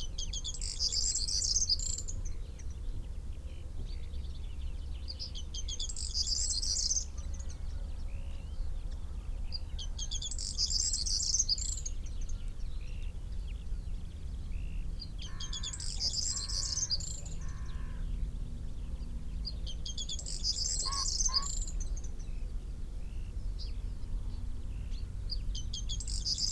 Green Ln, Malton, UK - corn bunting ... song ...
corn bunting ... song ... xkr SASS to Zoom H5 ... bird call ... song ... from pheasant ... dunnock ... chaffinch ... crow ... wood pigeon ... skylark ... taken from unattended extended unedited recording ...
England, United Kingdom, 14 April 2021, ~08:00